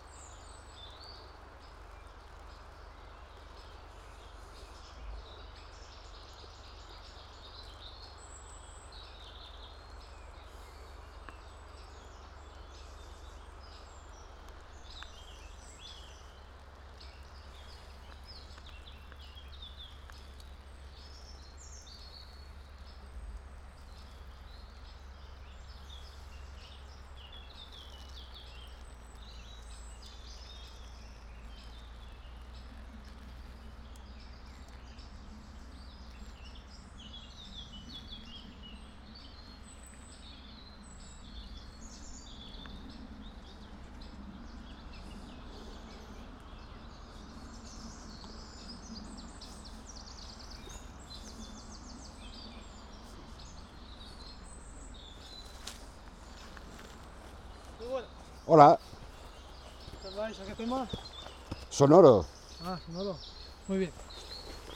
Cerezales del Condado, León, España - rio y pescador
orilla del rio Porma - pajaros - grillo - pis - pescador compitiendo a la carrera